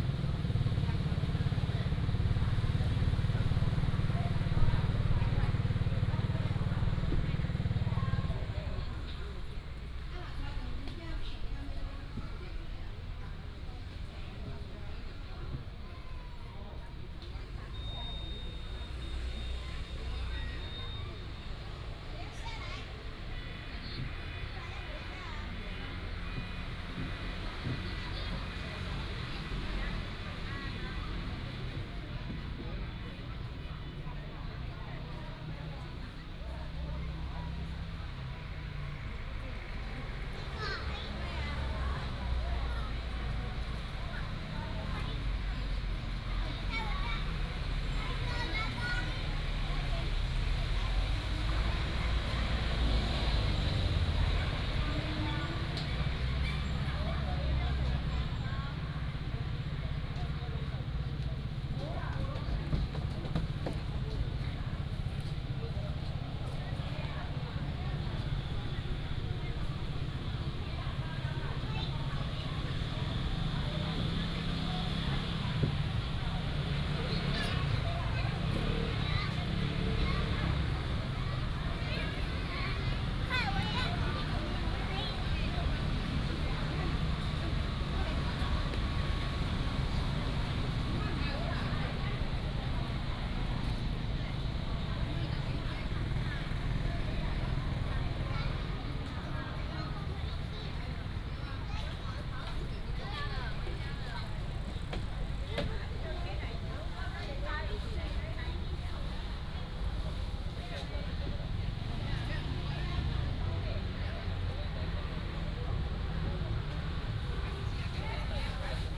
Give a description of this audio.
in the park, Traffic sound, Children's play area